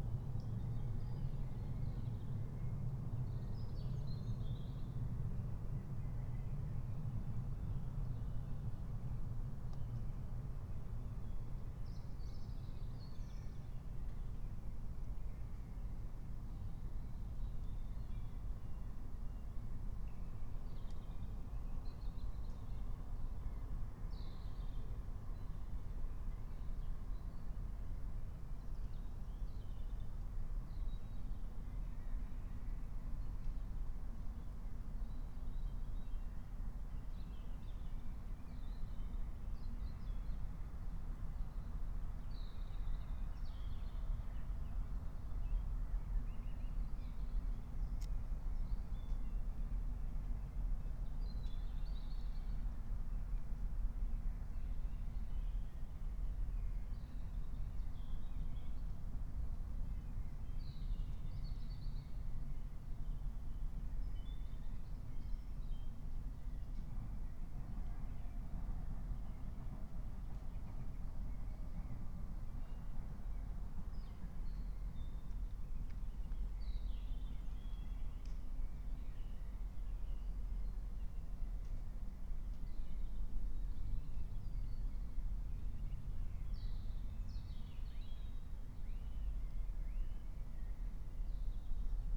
04:45 Berlin, Königsheide, Teich - pond ambience
Deutschland